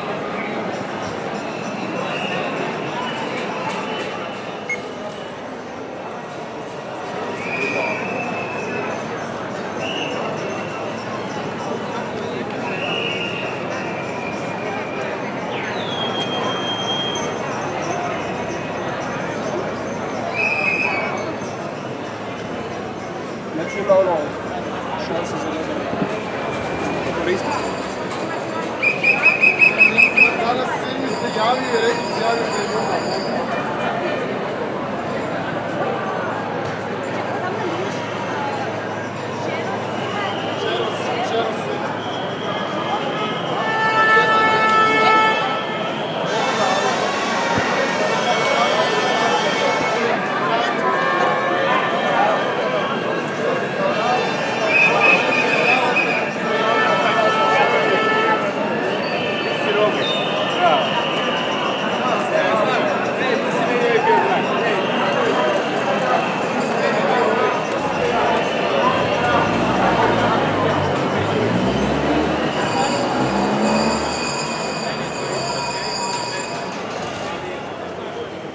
Zagreb, demonstrations for Varsavska - against devastation
demonstrations in center of Zagreb against devastation of the public pedestrian zone for private interest
City of Zagreb, Croatia, 19 July 2010